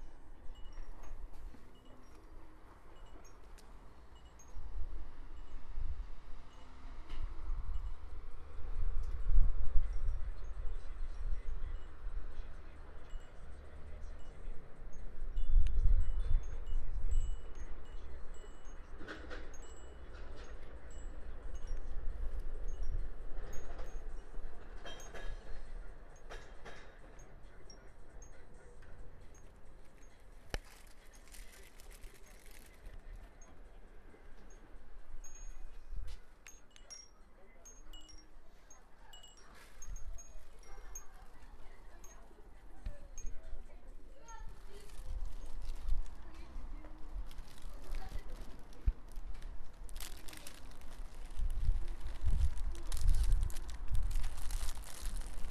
Tourist buying cookies, bells on the kiosk and passing tram
Tourist (Tomas the Canadian guy) buying cookies, bells on the kiosk while the tourist eating his cookies and sound of a tram passing nearby. (jaak sova)